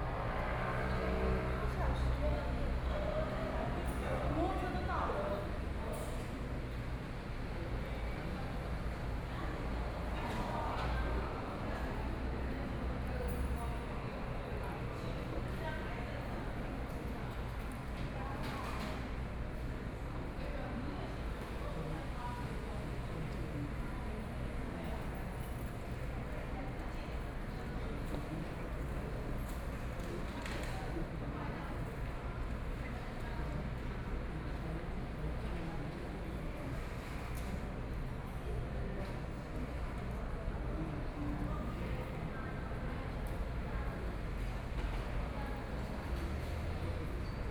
Yilan Station, Taiwan - In the station hall
In the station hall, Japanese tourists sound, Stations broadcast audio messages, Train traveling through the platform, Binaural recordings, Zoom H4n+ Soundman OKM II
Yilan City, Yilan County, Taiwan, 5 November 2013